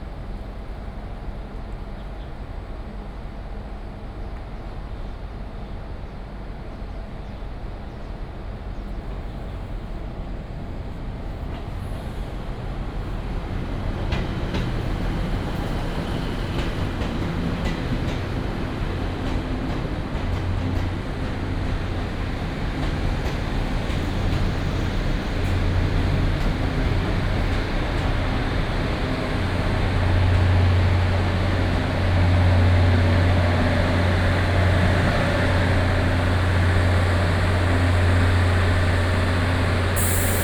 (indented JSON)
{
  "title": "太麻里火車站, 台東縣 - At the train station platform",
  "date": "2018-03-16 09:17:00",
  "description": "At the train station platform, Train arrives at the station",
  "latitude": "22.62",
  "longitude": "121.00",
  "altitude": "59",
  "timezone": "Asia/Taipei"
}